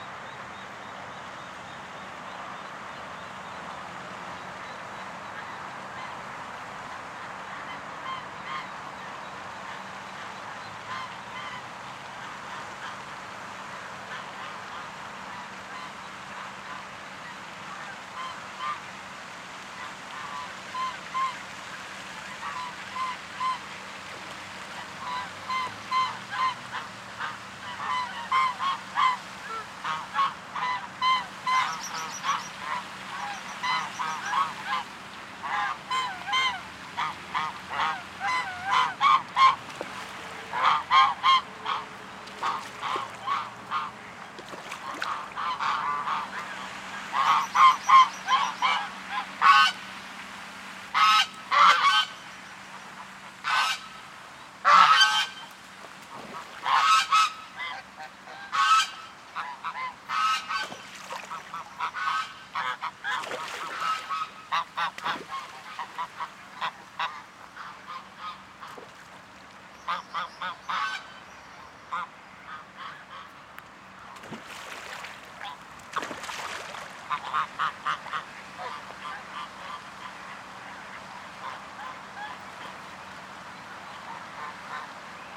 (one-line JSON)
{"title": "Almada, Portugal - Ducks", "date": "2017-04-14 17:18:00", "description": "Ducks and swans @ garden, Almada. Recorded with Zoom H6 XY stereo mic.", "latitude": "38.66", "longitude": "-9.17", "altitude": "12", "timezone": "Europe/Lisbon"}